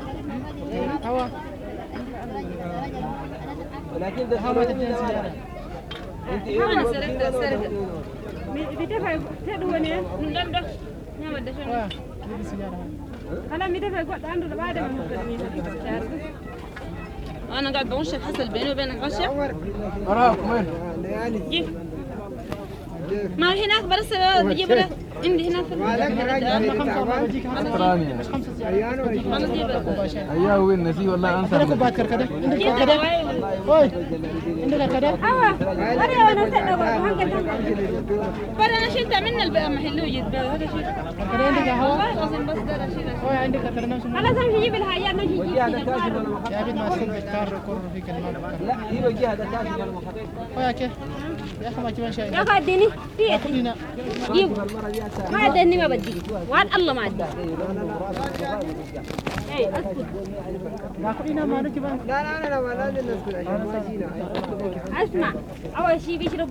Drinking tea in ed-Damazin. Almost everywhere you can drink tea on the streets of Sudan. Black tea or, like here, kirkede (hibiscus), hot or cold. Healthy and delicious.
ولاية النيل الأزرق, السودان al-Sūdān, 14 April 1987